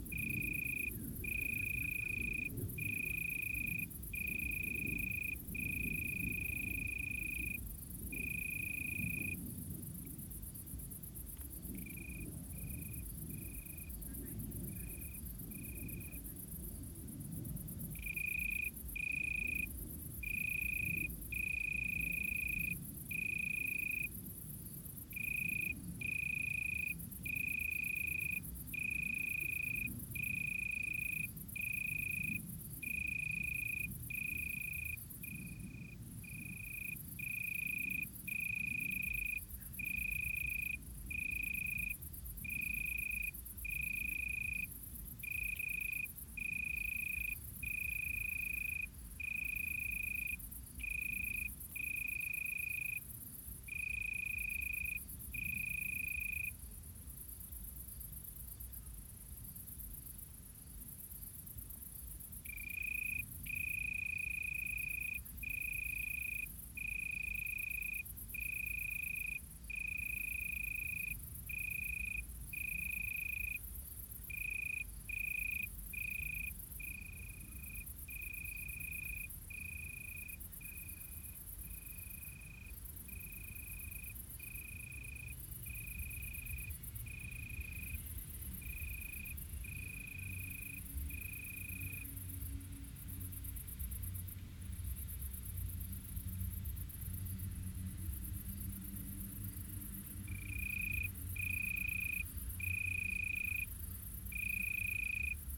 Solesmeser Str., Bad Berka, Deutschland - Suburban Germany: Crickets of Summer Nights 2022-No.3
Documenting acoustic phenomena of summer nights in Germany in the year 2022.
*Binaural. Headphones recommended for spatial immersion.